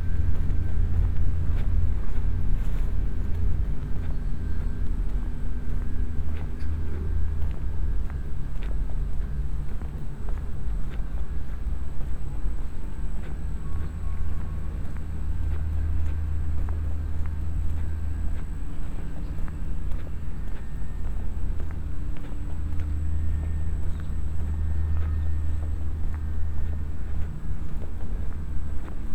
UAM Campus UAM Morasko - buzz around the campus
(binaural)
soundwalk around the campus of the UAM. the area is packed with various power generators and transformers. it's impossible to find a place around the campus where one doesn't hear the electric buzz of machinery and power circuits. a raft from one mass of drone into another. around 3:30 i'm walking by a bunch of trees. the rustle of leaves pierces through the electric rumble.